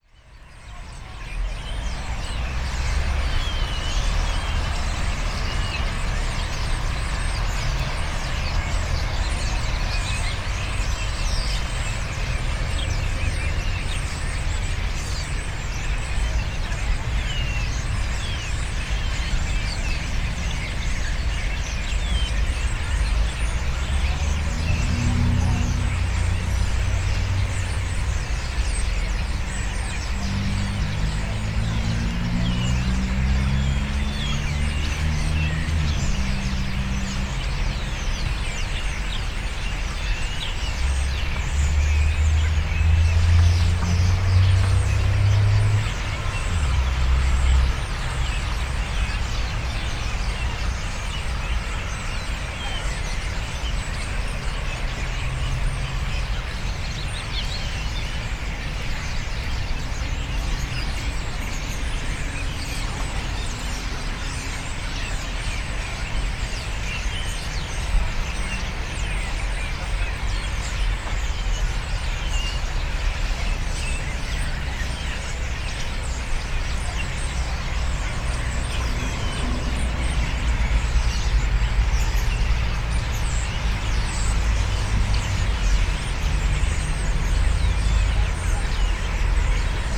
countless number of birds chirping in rushes around the pond right before sunset. (roland r-07)
wielkopolskie, Polska, 30 August 2019, 19:51